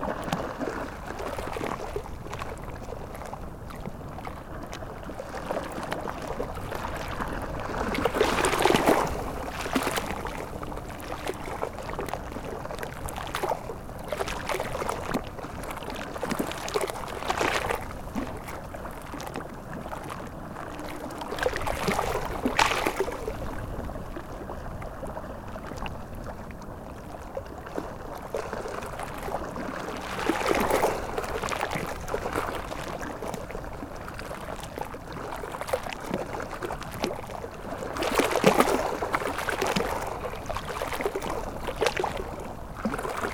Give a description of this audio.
Waves on the Seine river, during the high tide.